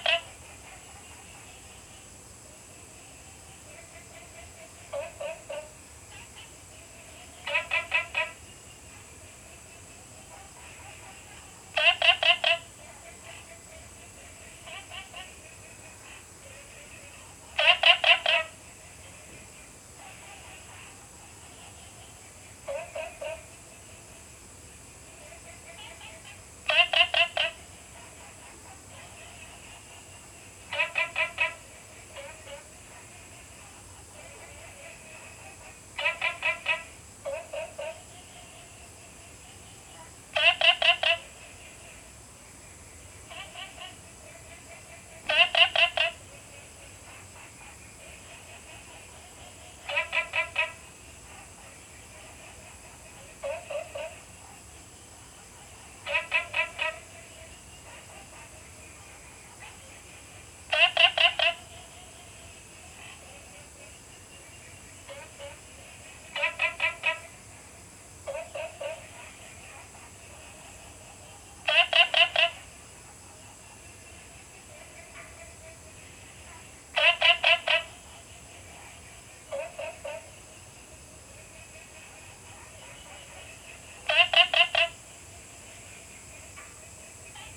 {"title": "青蛙ㄚ 婆的家, Puli Township - In Bed and Breakfasts", "date": "2015-09-03 20:48:00", "description": "Frog calls, Insect sounds\nZoom H2n MS+XY", "latitude": "23.94", "longitude": "120.94", "altitude": "463", "timezone": "Asia/Taipei"}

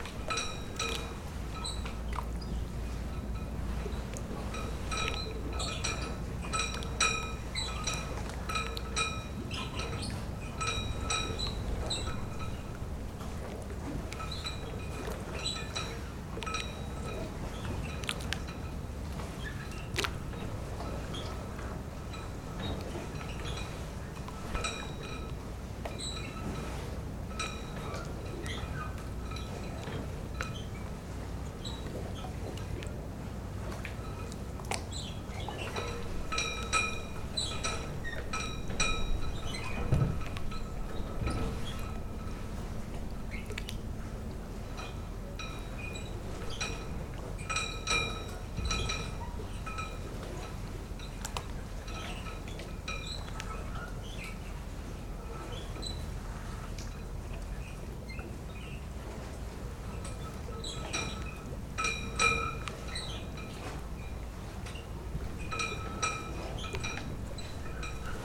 {"title": "San Marco, Venezia, Italien - riva degli schiavoni", "date": "2009-10-26 02:10:00", "description": "riva degli schiavoni, venezia s. marco", "latitude": "45.43", "longitude": "12.34", "altitude": "10", "timezone": "Europe/Rome"}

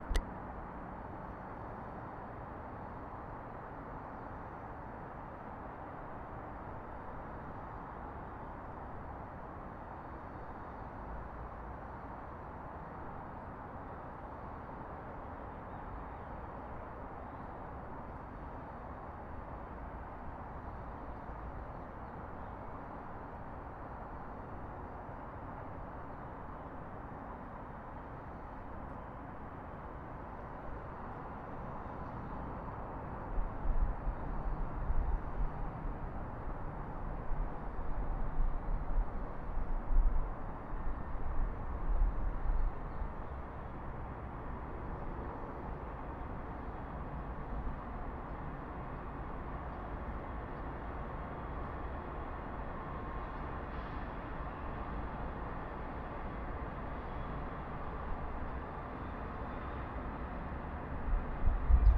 {"title": "N Cascade Ave, Colorado Springs, CO, USA - McGregorDormWestSide27April2018", "date": "2018-04-27 08:20:00", "description": "Recorded on west end of McGregor Dorm at Colorado College. Recorded with a Zoom H1 recorder at 8:20 am on a sunny morning. The soundscape includes the hum of the highway, a train, and birds singing in the background.", "latitude": "38.85", "longitude": "-104.83", "altitude": "1840", "timezone": "America/Denver"}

